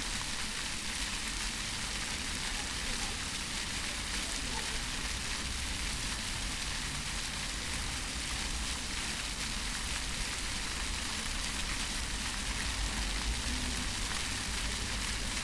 wien x: brunnen am columbusplatz
Vienna, Austria, 29 September 2014, 10:41